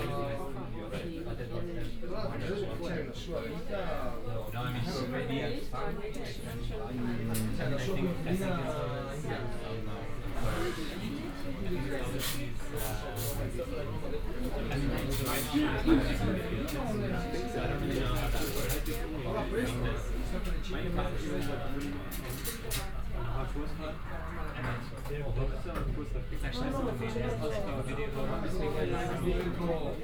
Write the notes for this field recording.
coffee break at one of the most italian cafe bar in town... (Sony PCM D50, OKM2)